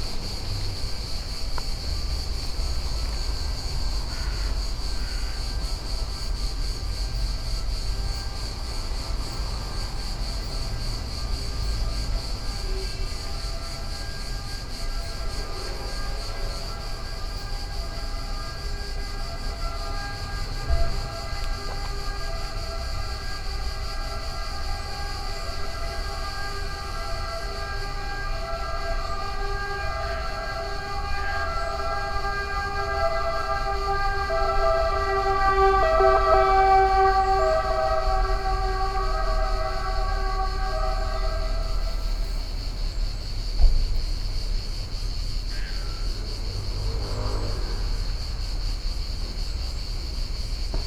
(binaural recording)
crickets make some serious sounds in Rome. As if they were rubbing two coarse metal files against each other. The closest one gets silent for a while, making room for roaring motorbikes, street noise and an approaching ambulance.
31 August, 10:27